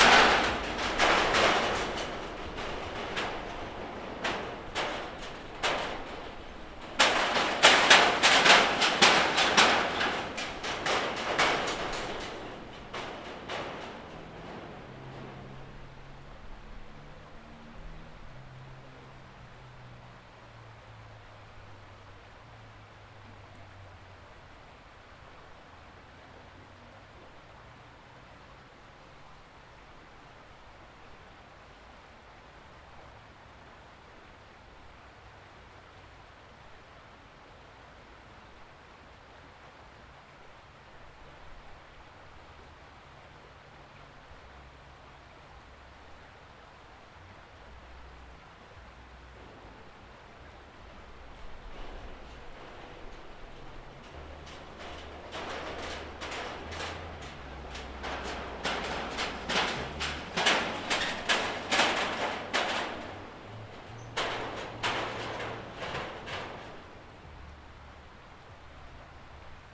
Sewells Road Bridge, Sewells Rd, Scarborough, ON, Canada - Rouge River at Sewells Road Bridge
Recording of the river as cars pass occasionally over the nearby metal bridge.